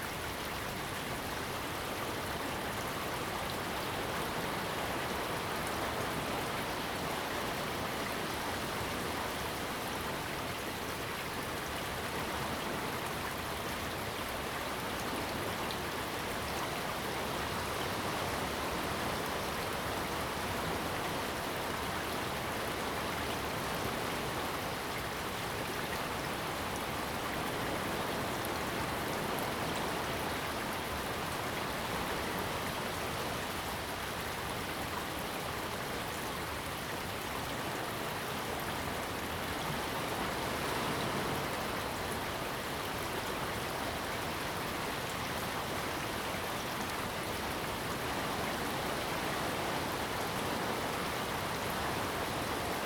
Sound of the waves, Stream sound, Aircraft flying through
Zoom H2n MS+XY